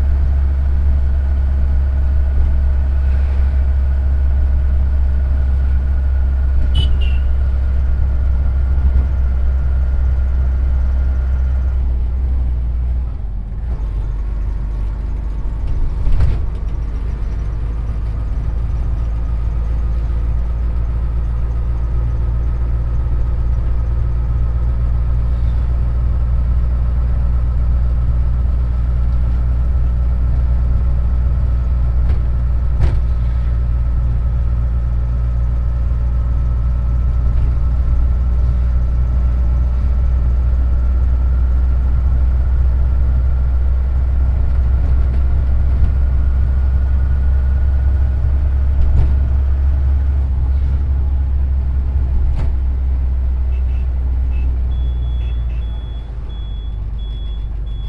Madgaon, road, Colva beach to Madgaon railway station
India, Goa, Madgaon, Rickshaw